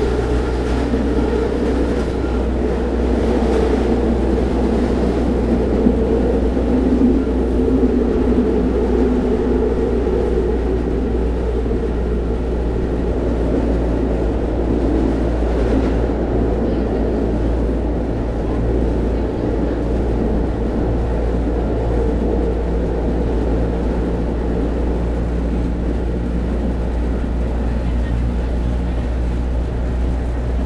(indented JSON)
{
  "title": "Poznan, express tram line, Plaza Mall to Solidarnosci Avenue - tram ride",
  "date": "2012-07-18 10:52:00",
  "description": "the cartridge bursts with a squall of intricate metallic rattle, deep drone, and massive swooshes",
  "latitude": "52.44",
  "longitude": "16.92",
  "altitude": "84",
  "timezone": "Europe/Warsaw"
}